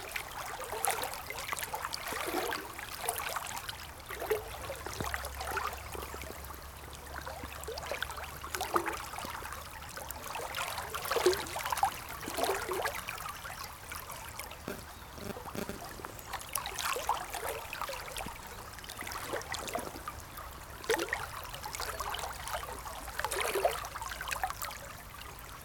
Unnamed Road, Česká Lípa, Česko - Šporka creek
The sound of running water in the Šporka creek, a random dog came for a drink. Tascam DR-05x, built-in microphones